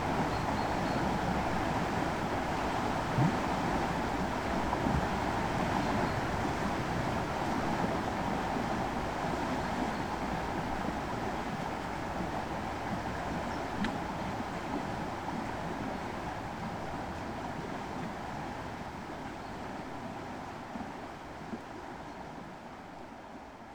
burg/wupper: ufer der wupper - the city, the country & me: alongside the wupper river
the city, the country & me: october 15, 2011
Solingen, Germany, 15 October 2011